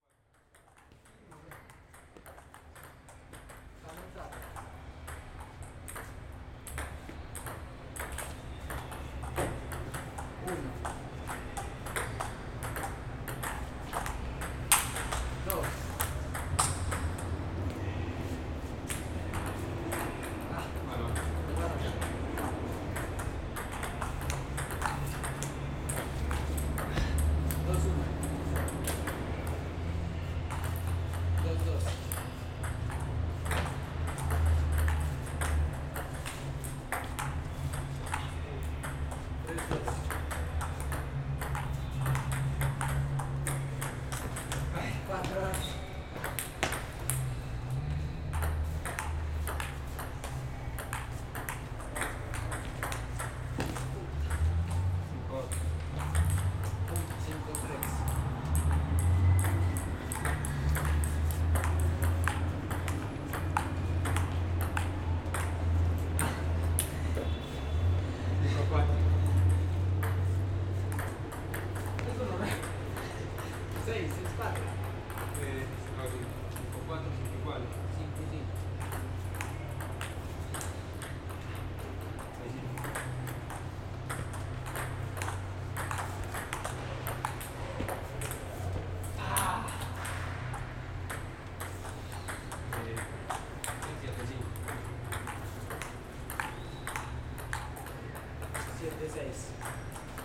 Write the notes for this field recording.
Descripción: Coliseo de la Universidad de Medellín (Zona de Ping Pong). Sonido tónico: pelotas de Ping Pong, gente hablando y saltando, vehículos transitando. Señal sonora: silbato, pitos de vehículos. Técnica: Grabación con Zoom H6 y micrófono XY, Grupo: Luis Miguel Cartagena, María Alejandra Flórez Espinosa, María Alejandra Giraldo Pareja, Santiago Madera Villegas y Mariantonia Mejía Restrepo.